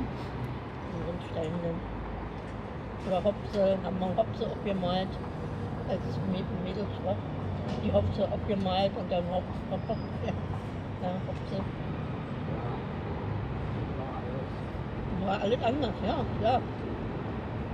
Wollankstraße, Berlin, Deutschland - Wollankstraße, Berlin - cosy talk about ancient times in front of a supermarket bread shop
Wollankstraße, Berlin - cosy talk about ancient times in front of a supermarket bread shop. Three elderly residents talk about the games they used to play outside on the streets when they were children. They conclude that increased road traffic may be one of the reasons why hopscotch, whip tops and kites are mostly outdated among children nowadays.
[I used the Hi-MD-recorder Sony MZ-NH900 with external microphone Beyerdynamic MCE 82]
Wollankstraße, Berlin - Gespräch über vergangene Zeiten, vor dem Bäcker des Supermarkts. Drei ältere Einwohner erinnern sich an die Spiele, die sie als Kinder draußen auf den Straßen spielten. Die heutigen Kinder tun ihnen leid: Sicher liege es auch am gestiegenen Verkehrsaufkommen, dass Hopse, Trieseln und Drachensteigen nun weitgehend der Vergangenheit angehören.
[Aufgenommen mit Hi-MD-recorder Sony MZ-NH900 und externem Mikrophon Beyerdynamic MCE 82]